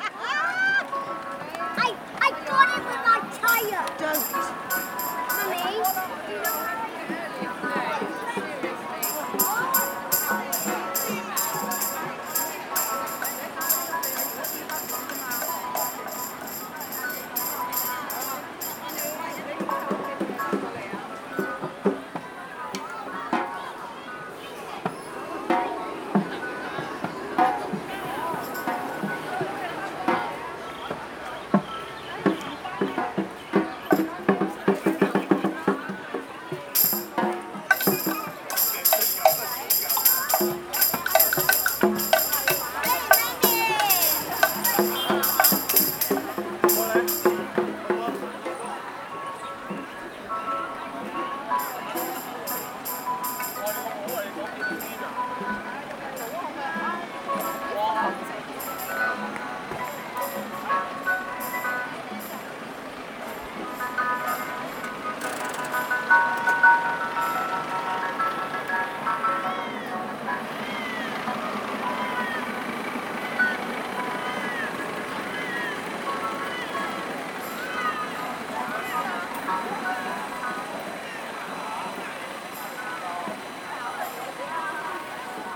{"title": "香港西貢 - 海傍街假日傍晚", "date": "2016-09-16 19:03:00", "description": "中秋翌日，西貢海旁遊人如鰂。\n尤其雪糕車附近，聚集了不同表演者及觀眾。\n更有表演者讓在場小孩敲玩非洲鼓。", "latitude": "22.38", "longitude": "114.27", "altitude": "6", "timezone": "Asia/Hong_Kong"}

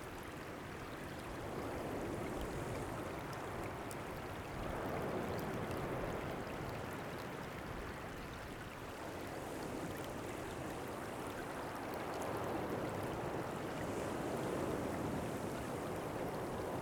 {"title": "Zhiben 知本濕地, Taitung City - Sound of the waves", "date": "2014-01-17 13:59:00", "description": "Sound of the waves, The sound of water, Zoom H6 M/S", "latitude": "22.69", "longitude": "121.07", "timezone": "Asia/Taipei"}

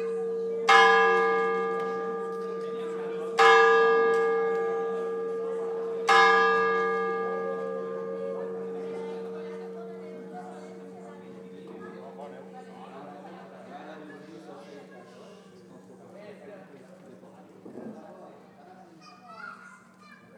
{"title": "Carrer Sant Rafael, Tàrbena, Alicante, Espagne - Tàrbena - Espagne - Ambiance du soir sur la place du village.", "date": "2022-07-22 21:00:00", "description": "Tàrbena - Province d'allocante - Espagne\nAmbiance du soir sur la place du village.\nZOOM F3 + AKG C451B", "latitude": "38.69", "longitude": "-0.10", "altitude": "561", "timezone": "Europe/Madrid"}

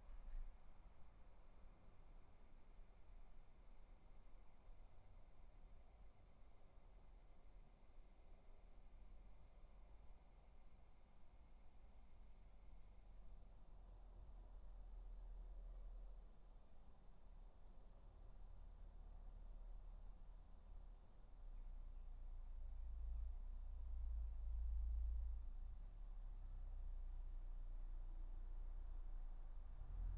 {"title": "Dorridge, West Midlands, UK - Garden 18", "date": "2013-08-13 20:00:00", "description": "3 minute recording of my back garden recorded on a Yamaha Pocketrak", "latitude": "52.38", "longitude": "-1.76", "altitude": "129", "timezone": "Europe/London"}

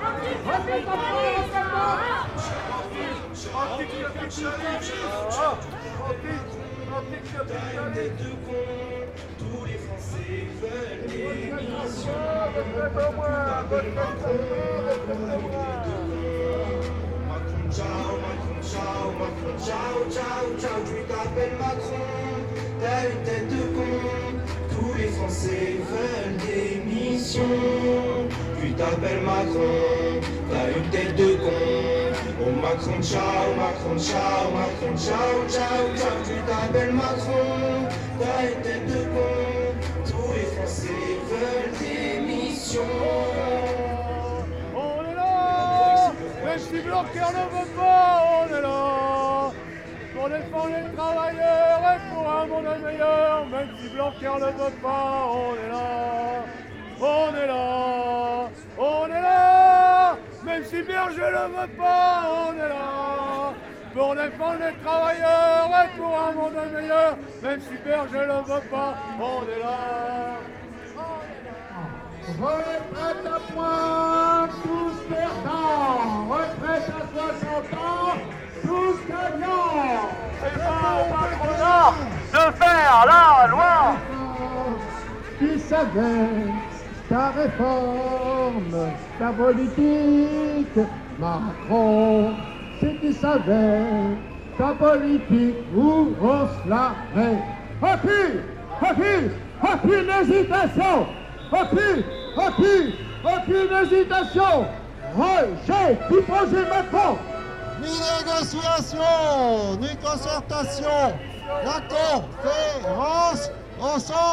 {"title": "Pl. du Maréchal Foch, Arras, France - Arras - Manifestation - 2020", "date": "2020-01-24 10:00:00", "description": "Arras (Pas-de-Calais)\nSur la place de la gare, manifestation contre la réforme des retraites (sous la présidence d'Emmanuel Macron).\nrevendications et slogans.", "latitude": "50.29", "longitude": "2.78", "altitude": "75", "timezone": "Europe/Paris"}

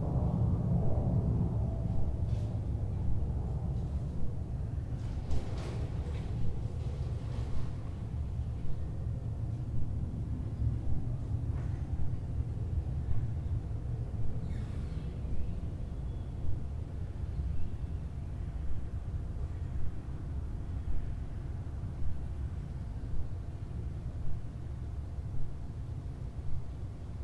{"title": "Pfungststrasse, Frankfurt, Germany - Sunday morning on the balcony", "date": "2012-09-09 09:30:00", "description": "Sunday morning sounds, birds, planes, neighbours. recorded on a Zoom H4. staying in Frankfurt to mount an exhibition of 3d work by Eva Fahle-Clouts with a new stereo mix of my FFOmeetsFFM soundscape.", "latitude": "50.12", "longitude": "8.71", "altitude": "117", "timezone": "Europe/Berlin"}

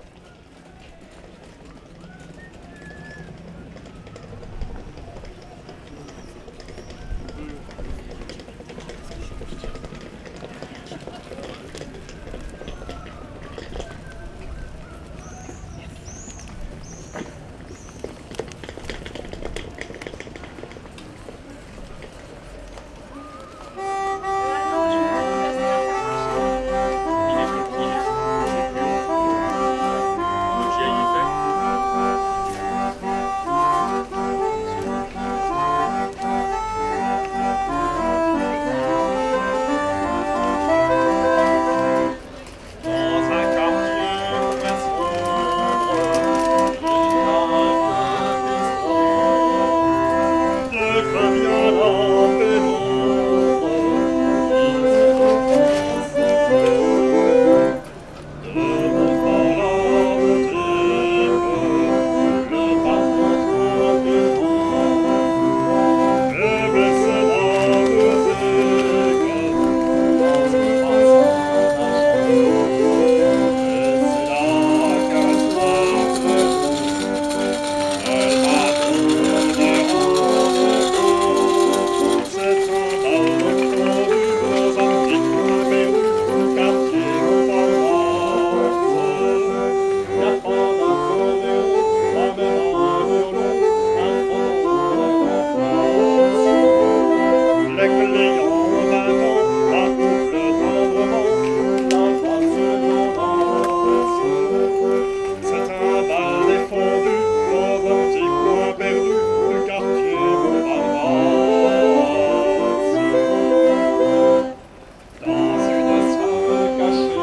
{"title": "Rue Vitruve 75020 Paris", "date": "2010-07-18 11:00:00", "description": "Orgue de Barbarie, chanson parisienne\nworld listening day", "latitude": "48.86", "longitude": "2.40", "altitude": "68", "timezone": "Europe/Paris"}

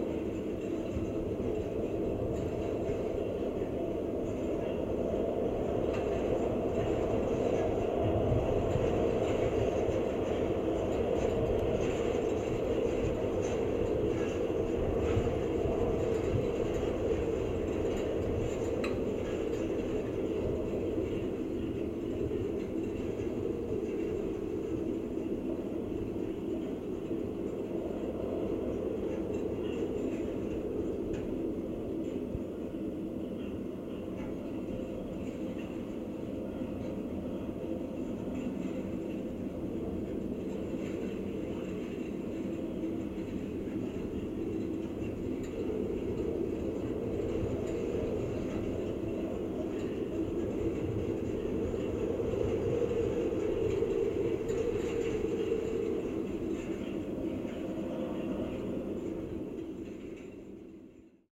{"title": "Kirkby Stephen, UK - Wire Fence", "date": "2022-05-17 13:00:00", "description": "Smardale Gill Nature Reserve. Sunday day wind blowing through a wire fence recorded with two Barcus Berry contact mics into SD MixPre 10T.\nPart of a series of recordings for a sound mosaic of the Westmorland Dales for the Westmorland Dales Landscape Partnership.", "latitude": "54.45", "longitude": "-2.43", "altitude": "248", "timezone": "Europe/London"}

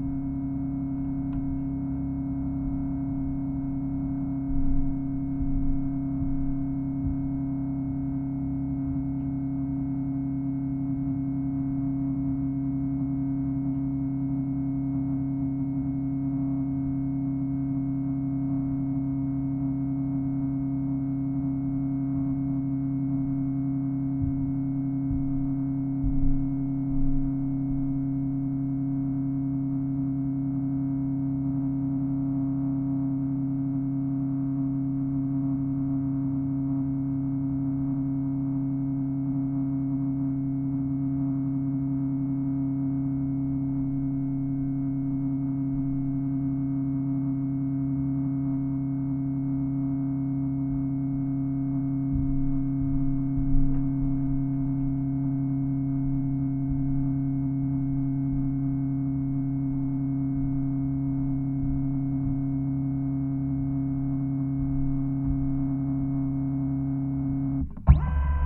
{"title": "East Austin, Austin, TX, USA - Scanning Contact Mics", "date": "2015-11-01 10:00:00", "description": "Scanning a pair of JrF contact mics in an Epson V600 scanner. Recorded into a Marantz PMD 661.", "latitude": "30.28", "longitude": "-97.72", "altitude": "188", "timezone": "America/Chicago"}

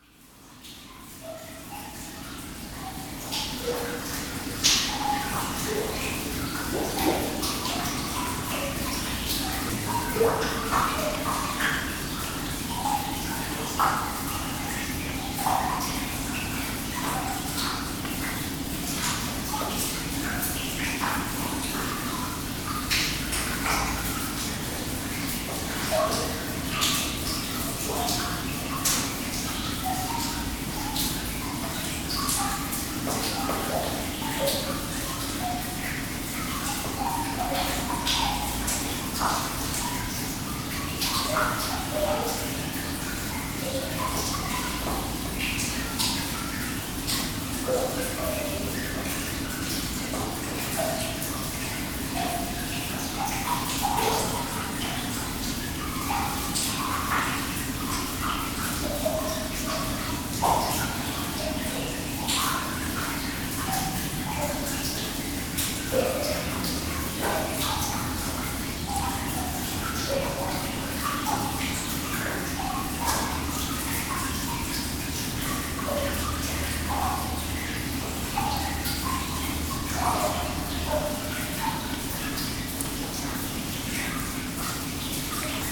{"title": "Aumetz, France - The pit François", "date": "2015-11-21 19:00:00", "description": "This is a 180 meters deep pit. Here is the sound of the water above the pit. The wind is very powerfull and it's audible.", "latitude": "49.43", "longitude": "5.96", "altitude": "417", "timezone": "Europe/Paris"}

